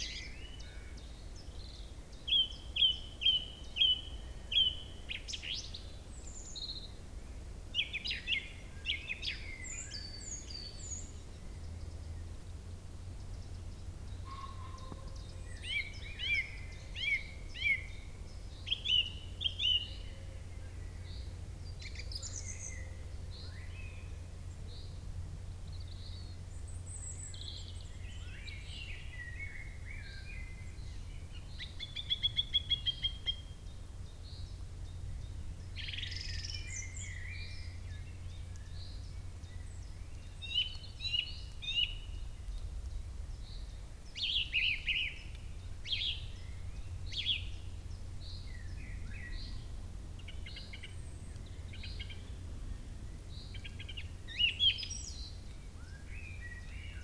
At the edge of Warburg Nature Reserve, Nettlebed, Oxfordshire, UK - Songbird, pheasants, aeroplane, rain
I was walking out of the Warburg Nature Reserve, where I had gone to listen. As I passed into an area with a clearing on the right, I heard the most beautiful bird song. I am not sure what bird this is, but I think it is from the songbird family? Perhaps some kind of Thrush? Its voice was being amplified beautifully by the shape of the space, the tree trunks, and the open cavern created by the clearing. I could hear pheasants distantly, too, and at some point there was a light rain. Just a dusting of it. After shuffling around to find the exact right place to stand and listen, I settled into a stillness, and was so quiet that a tiny mouse emerged from the ground near to me and began to bustle in the bushes. There we were, mouse, birds, planes, rain, space. Beautiful.
Henley-on-Thames, Oxfordshire, UK, 28 April 2014